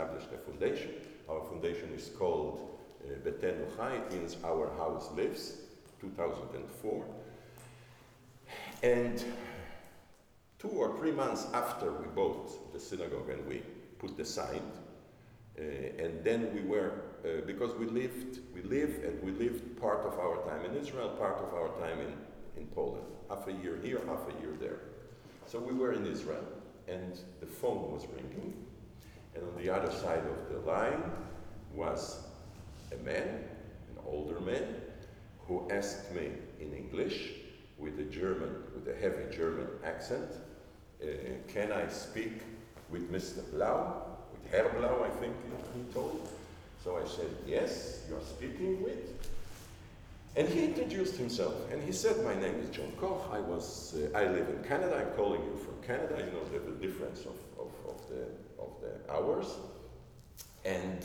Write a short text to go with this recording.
Rafael Blau tells the story of John Koch, an important figure in the revival of the synagogue, (Sony PCM D50)